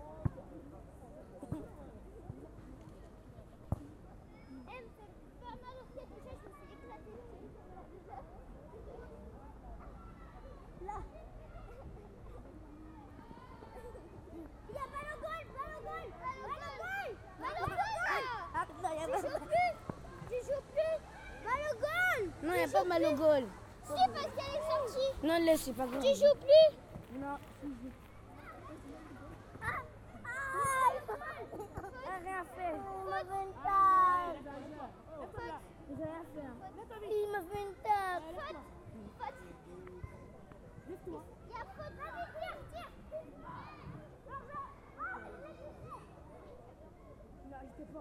Parc de Belleville, Rue des Couronnes, Paris, France - Parc de Belleville
recorded w/ Zoom H4n